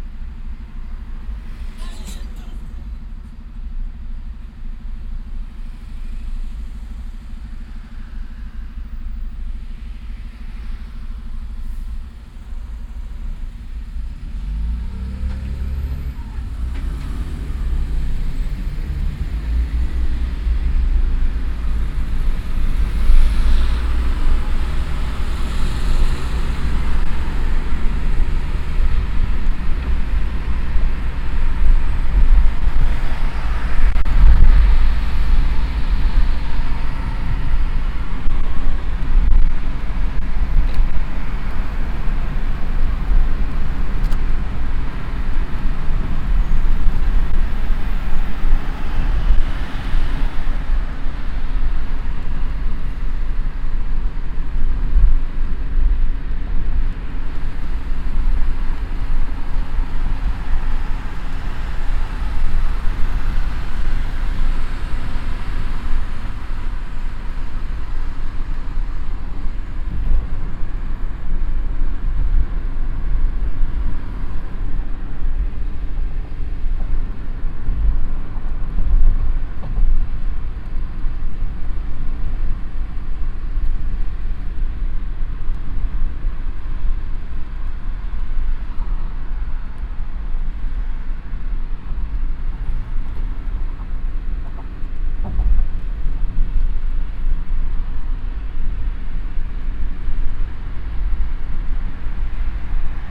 cologne, innere kanalstrasse, stadtauswärts - fahrt über zoobrücke
innere kanalstrasse stadtauswärts - nach köln nord - anfahrt und fahrt über zoobrücke- nachmittags - parallel stadtauswärts fahrende fahrzeuge - streckenaufnahme teil 05
soundmap nrw: social ambiences/ listen to the people - in & outdoor nearfield recordings
27 August 2008